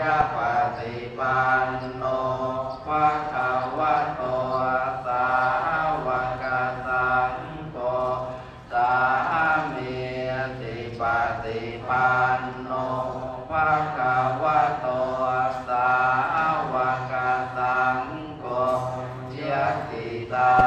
Phra Borom Maha Ratchawang, Phra Nakhon, Bangkok, Thailand - drone log 11/03/2013
Grand Palace, Hor Phra Monthian Dharma, prayers
(zoom h2, binaural